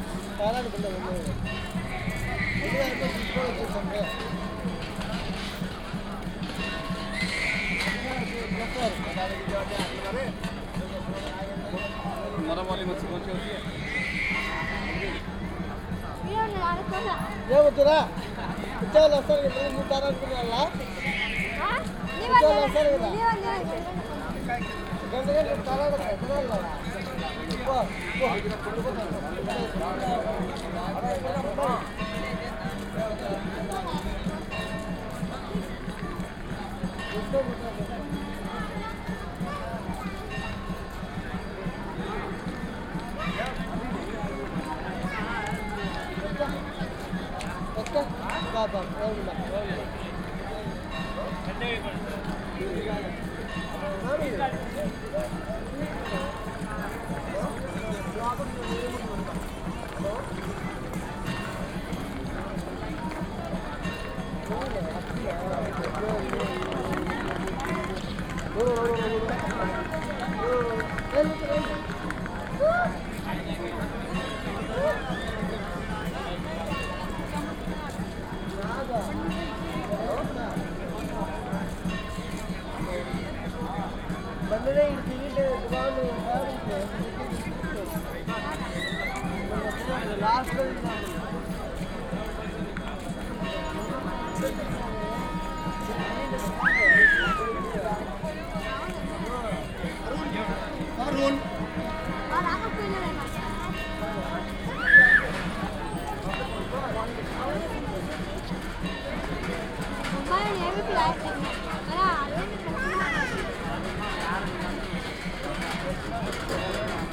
Mumbai, Dadar Central, Taxis station
India, Mumbai, Railway station, binaural